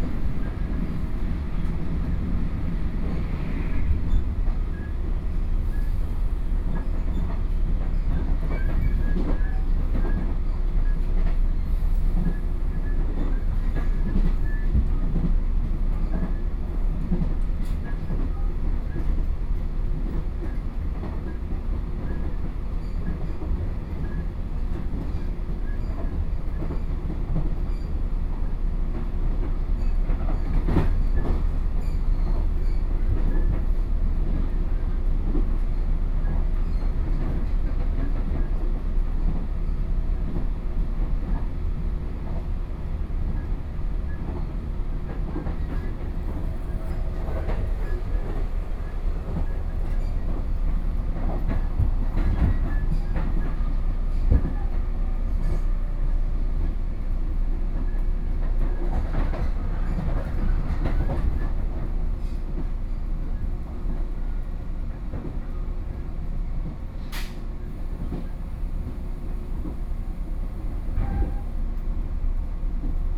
Hukou Township, Hsinchu County - In a local train
In a local train, on the train, Binaural recordings
湖口鄉 (Hukou), 中華民國, 18 May